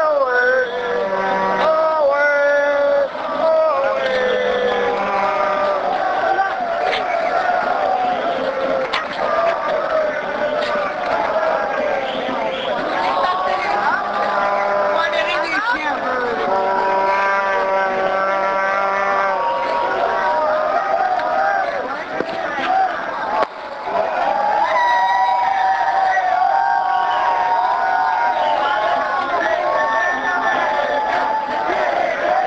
Montreal: Parc Maisonneuve - Parc Maisonneuve
equipment used: Panasonic RR-US395
Chants et Cris de la foule apres le spectacle de la Fête-Nationale du Québec au Parc Maisonneuve